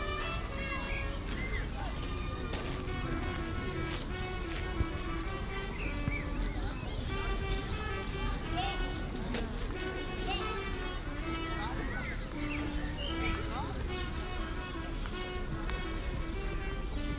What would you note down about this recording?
near a children's playground, trumpeters in the background, walkers, may 1st . (sennheiser ambeo smart headset)